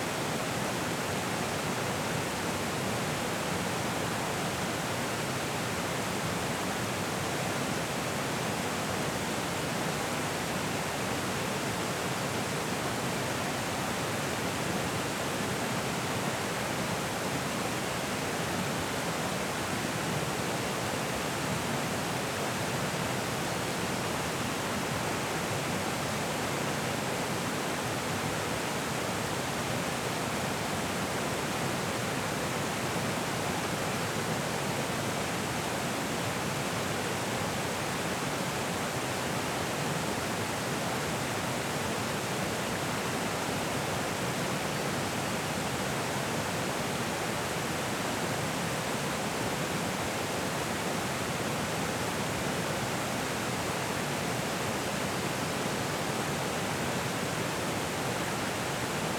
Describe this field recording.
After a night of rains a previously dry river begins flowing heavily. Recorded with a Zoom H5